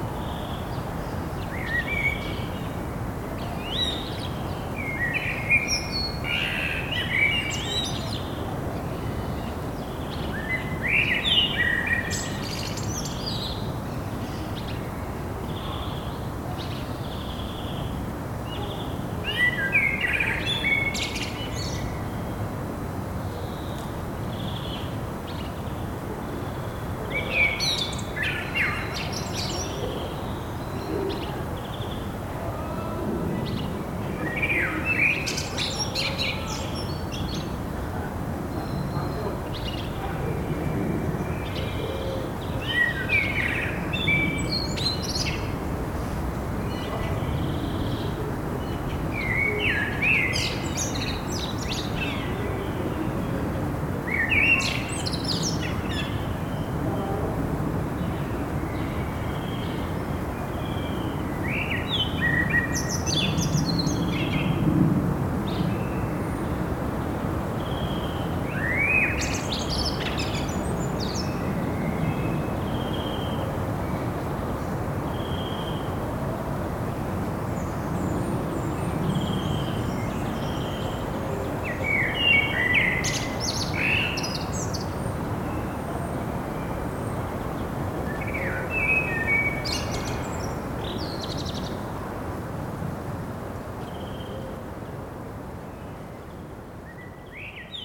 Rue des Dames de la Prte, Toulouse, France - Birds of the old hopital 02
old hospital, birds, in the distance the noise of the city
people walking, motorcycle, and car
Captaation :
ZOOMh4n